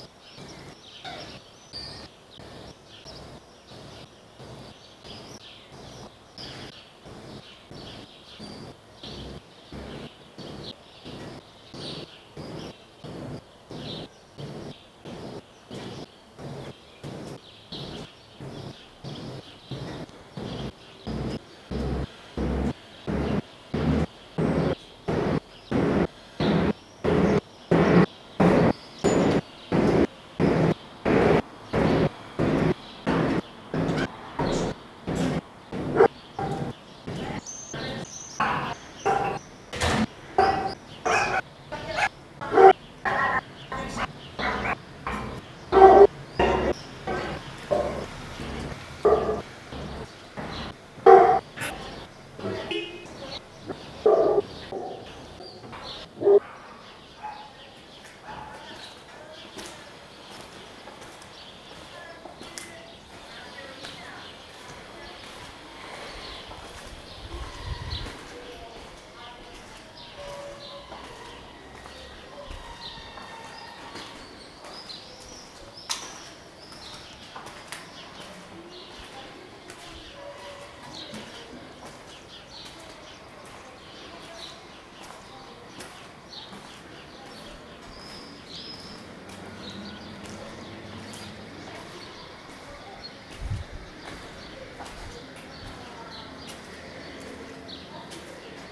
Vallecas, Madrid - Fibonacci Flash-Forward [F(0)-F(11)] #WLD2018
Acoustic Mirror: Fibonacci Flash-Forward [F(0)-F(11)] #WLD2018 ---
Go out on a soundwalk. Listen. Walk. Make your steps follow a
Fibonacci sequence. Listen to your steps. Listen to the
numbers. Listen a few steps ahead into the future. Walk a few steps
ahead into the future.
Madrid, Spain, 18 July 2018, 10:24